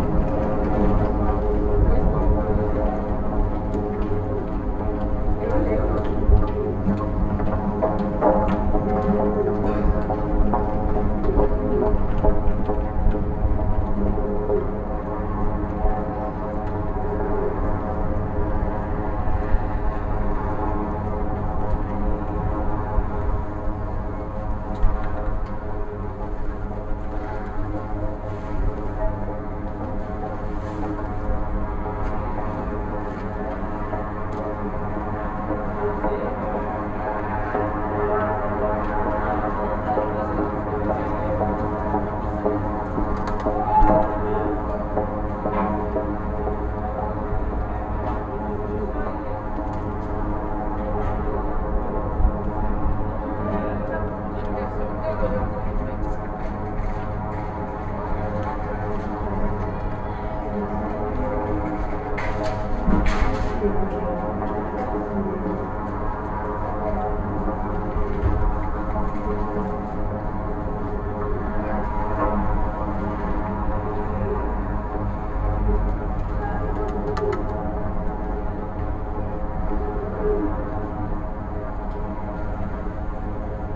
Tallinn, Baltijaam R-Kiosk handrail - Tallinn, Baltijaam R-Kiosk handrail (recorded w/ kessu karu)
hidden sounds, resonance inside a hand railing outside a newspaper shop at Tallinns main train station